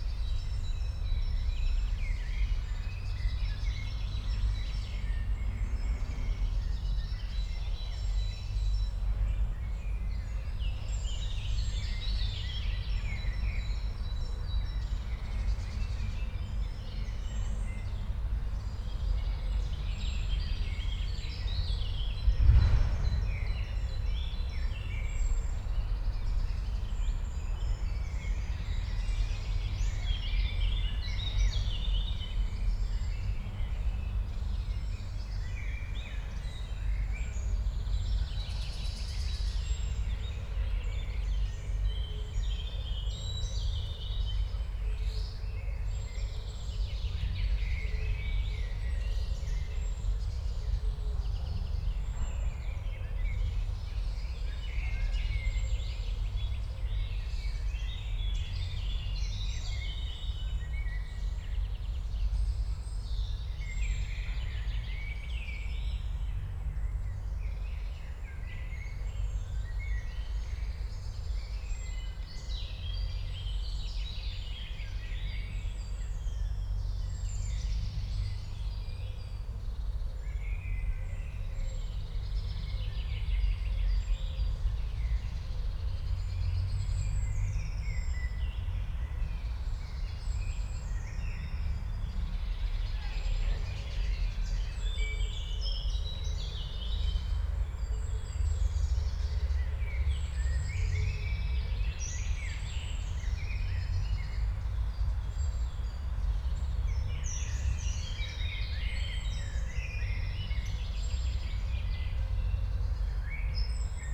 Siemianowice, Miejski park, ambience /w distant city traffic
(Sony PCM D50, DPA4060)